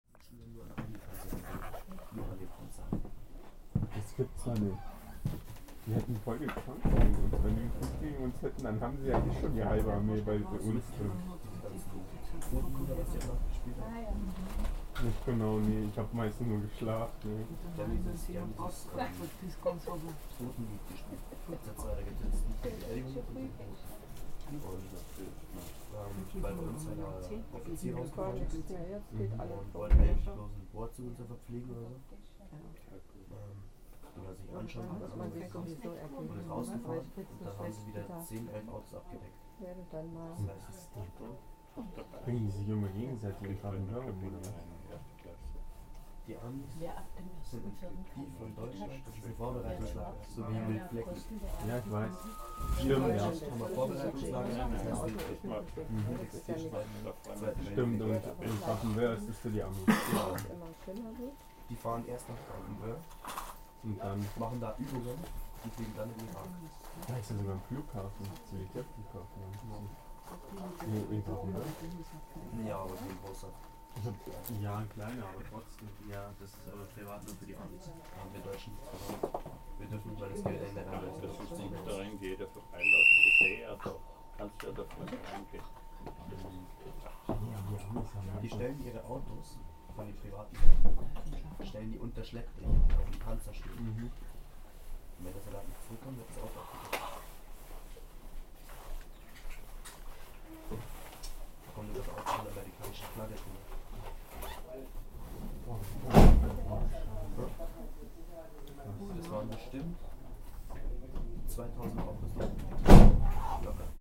train from munich to rosenheim, passengers
passengers talking in the train, especially two army conscripts reflecting on their work. recorded june 6, 2008. - project: "hasenbrot - a private sound diary"
Vaterstetten, Germany